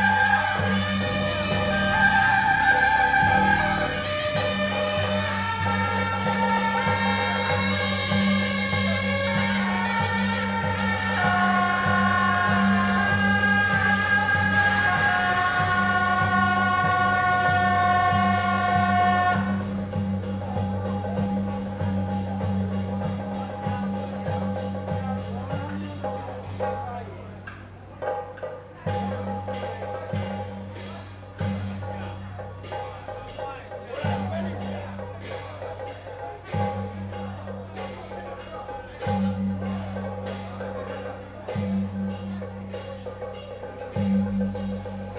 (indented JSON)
{
  "title": "Ln., Tonghua St., Da’an Dist., Taipei City - Temple festivals",
  "date": "2012-02-13 20:28:00",
  "description": "in a small alley, temple festivals, The sound of firecrackers and fireworks\nZoom H4n + Rode NT4",
  "latitude": "25.03",
  "longitude": "121.56",
  "altitude": "16",
  "timezone": "Asia/Taipei"
}